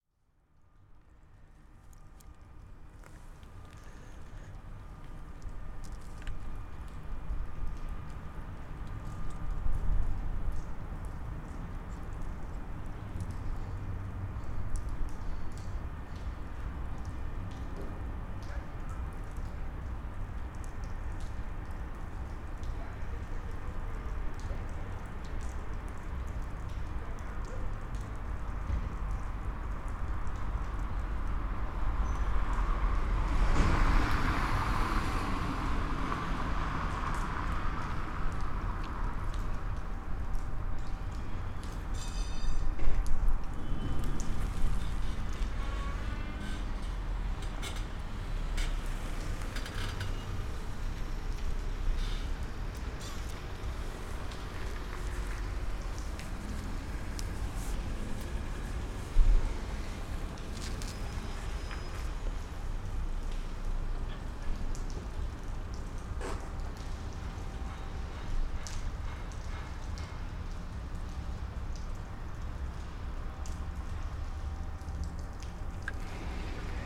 water is dripping from its source, the machine that used to be working, noisy, now is disfunctonal, silent. machines farther away are still in motion..
Binaural recording (dpa4060 into fostex FR2-LE).
Binckhorst Mapping Project.